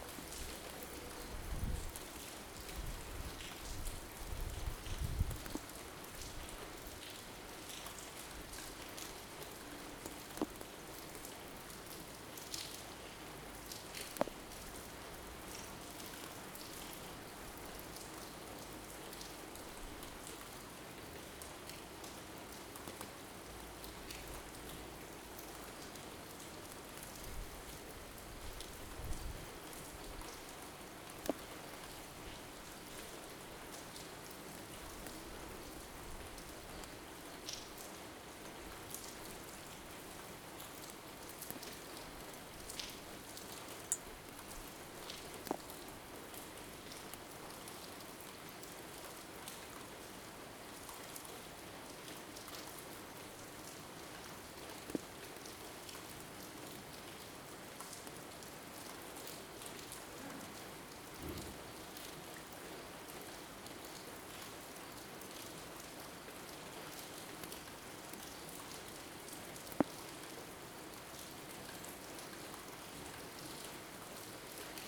Carrer de Joan Blanques, Barcelona, España - Rain13042020BCNLockdown
Rain field recording made in the morning during the COVID-19 lockdown. Recorded using a Zoom H2. Raw field recording, no edition.